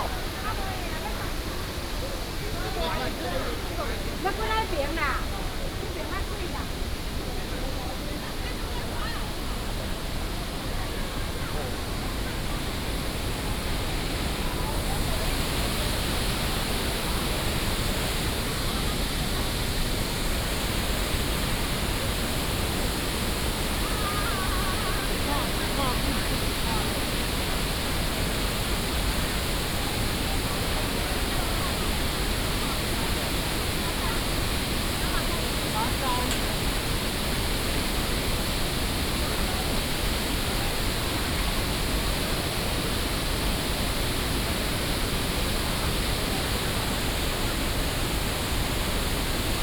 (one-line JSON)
{"title": "十分風景特定區, Pingxi District, New Taipei City - Walking in the Falls Scenic Area", "date": "2016-12-04 11:53:00", "description": "Walking in the Falls Scenic Area", "latitude": "25.05", "longitude": "121.79", "altitude": "185", "timezone": "GMT+1"}